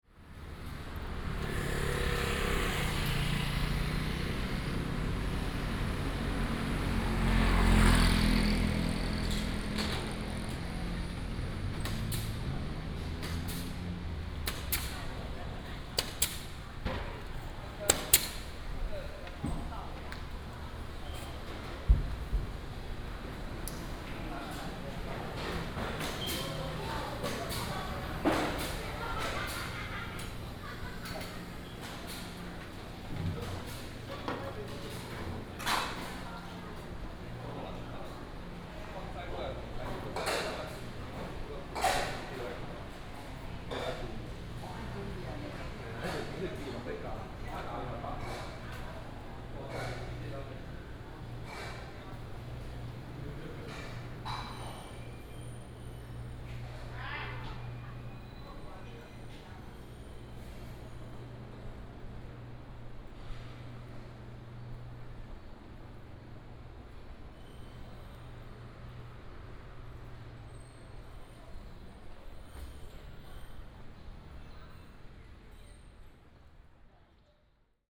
員林電影城, Yuanlin City - Walking in the mall
Walking in the mall, In the alley
Changhua County, Taiwan, 18 March